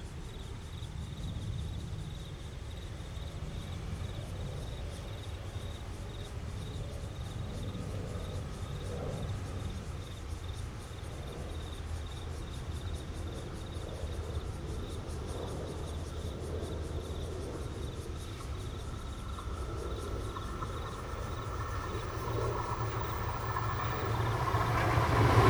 Insect sounds, Traffic Sound, MRT trains through, Bicycle sound, In the next MRT track
Zoom H2n MS+XY +Spatial Audio